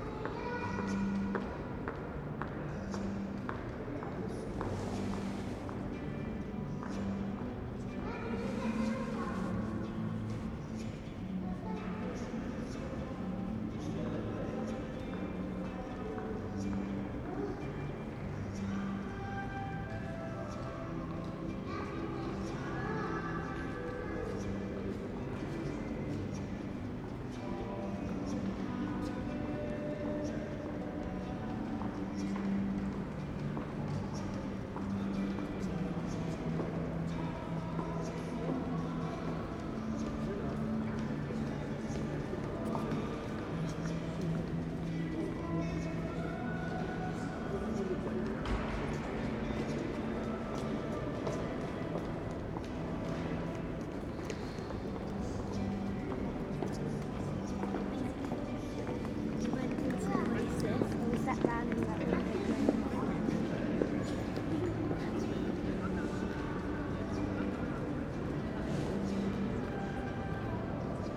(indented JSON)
{
  "title": "Exchange Arcade, Nottingham, UK - Reverberant atmosphere inside the Exchange Arcade",
  "date": "2018-01-06 11:45:00",
  "description": "The oldest shopping mall in Nottingham built in 1929.",
  "latitude": "52.95",
  "longitude": "-1.15",
  "altitude": "50",
  "timezone": "Europe/London"
}